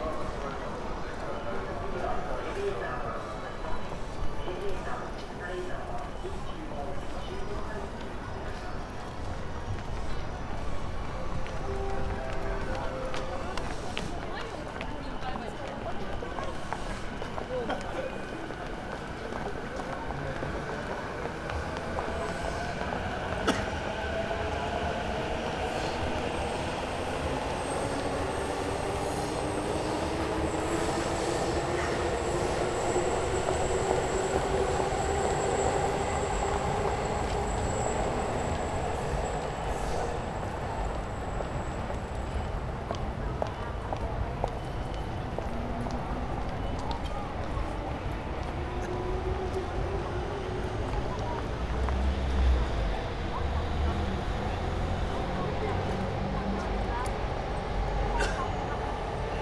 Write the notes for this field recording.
people walking out of the station, omnipresent announcements, trains swishing above